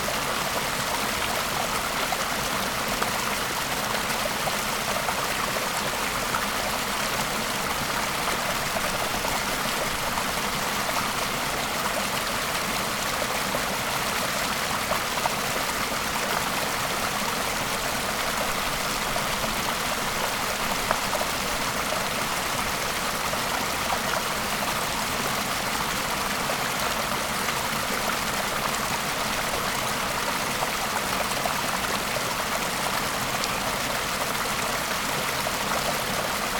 Merthyr Tydfil, UK - Cooling stream after a hot day of hiking
Recorded with LOM Mikro USI's and Sony PCM-A10.
Cymru / Wales, United Kingdom